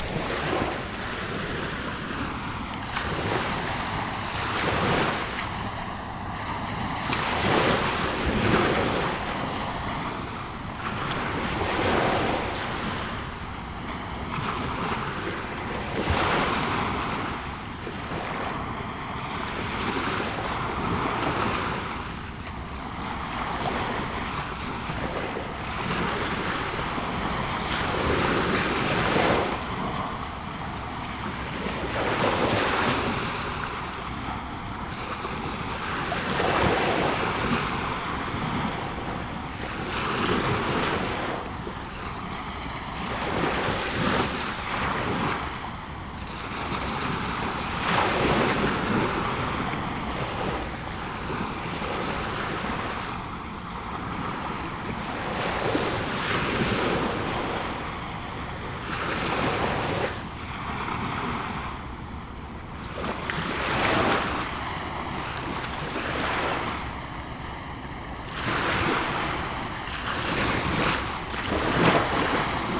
Rivedoux-Plage, France - The bridge beach
Recording of the small waves near the bridge of Ré.
May 2018